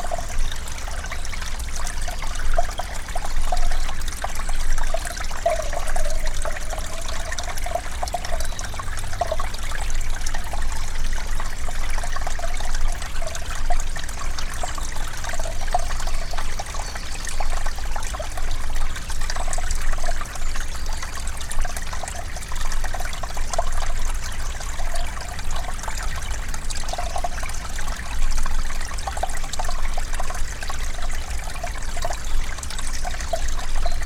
A car passing nearby.
SD-702, Me-64, NOS
Uccle, Belgium, 10 January 2012, 11:03